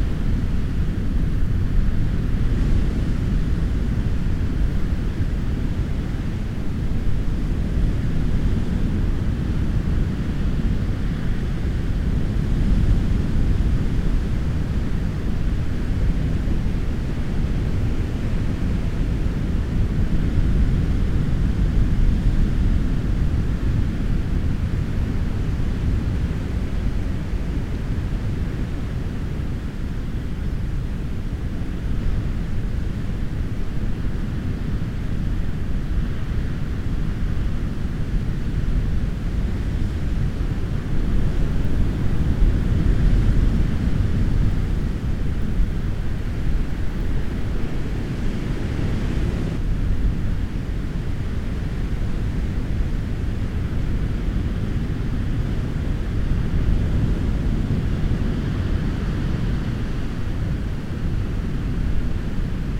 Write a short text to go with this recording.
Recorded with a Zoom H4N and electret microphones placed down a rabbit hole.